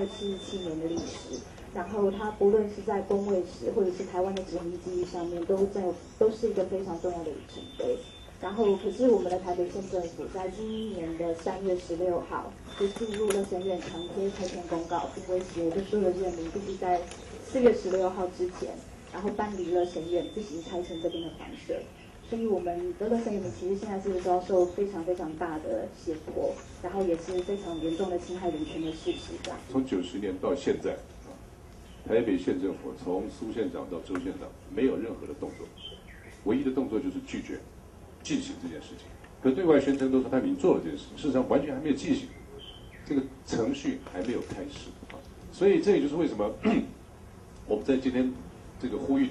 Lo-Sheng Sanatorium, Department of Health, Executive Yuan, Taiwan - Press conference
Taiwan's renowned director and choreographer for the Lo-Sheng Sanatorium incident to the government protests, Sony ECM-MS907, Sony Hi-MD MZ-RH1